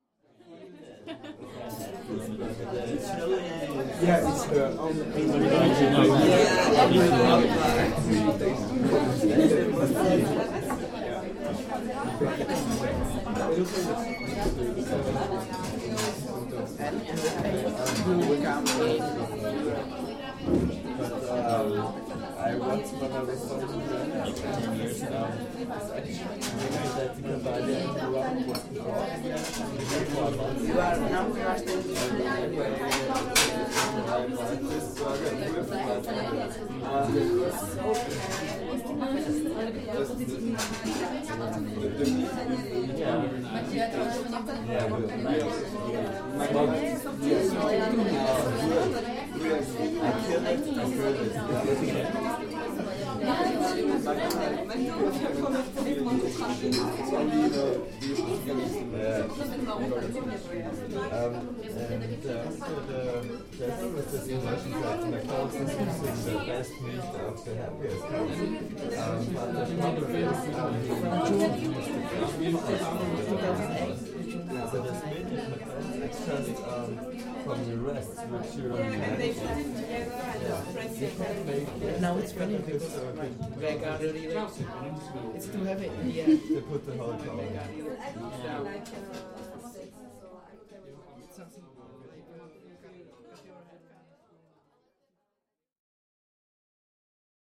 Pub U kruhu evening: Palackého street